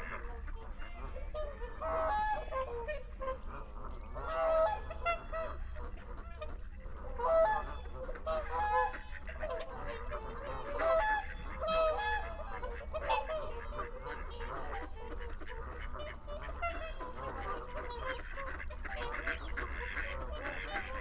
Dumfries, UK - whooper swan soundscape ... sass ...
whooper swan soundscape ... scottish water hide ... xlr sass on tripod to zoom h5 ... bird calls from ... teal ... moorhen ... mallard ... barnacle geese ... shoveler ... mallard ... jackdaw ... time edited unattended extended recording ... at 50:00 mins approx ... flock of barnacle geese over fly the hide ... time edited unattended extended recording ...
31 January 2022, ~17:00